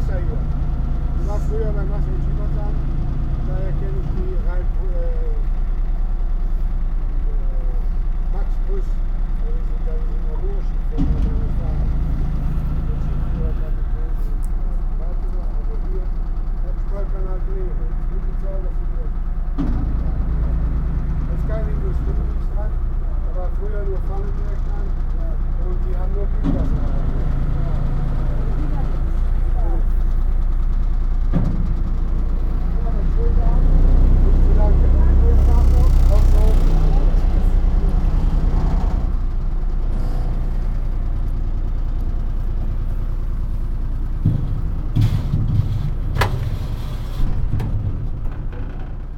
schenkenschanz, ferry
On the small ferry boat that transports passengers from Düffelward through a small Rhine arm to the Rhine island with the village Schenkenschanz. The sound of the boat motor and the ferry shipman talking to his passengers. At the end the sound of the metal ramp touching the concrete landing area.
soundmap d - social ambiences and topographic field recordings